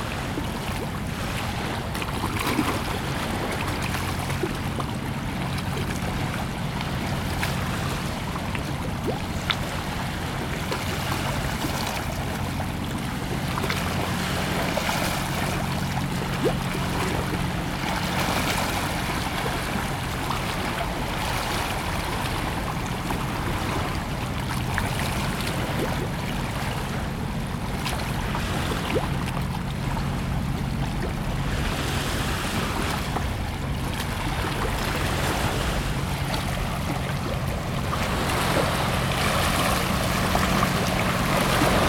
Mare su Piattaforma, suoni di gabbiani e barche
Palermo, Italy